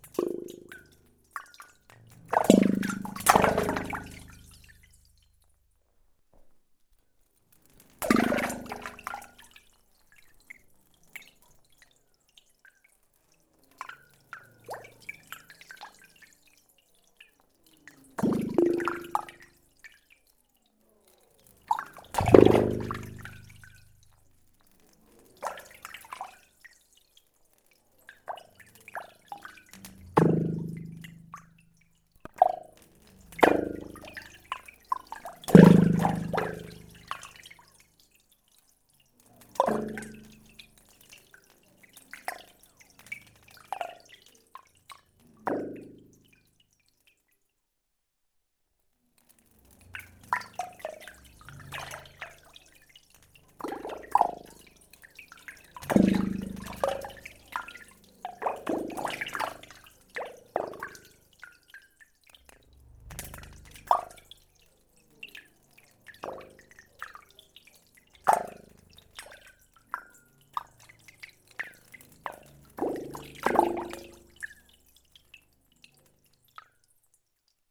Largentière, France - Strange pipe
Pipes are always my favourite objects in underground mines. You can manipulate it whatever you want, it will do different sounds everytime. That's why since a year now, I'm especially researching mining pipes. This one is fun, like many other. It's a vertical pipe, buried in the ground. At the bottom, there's water. I put microphones inside the pipe (about one meter) and I'm droping very small gravels.
Pipes are all my life ;-)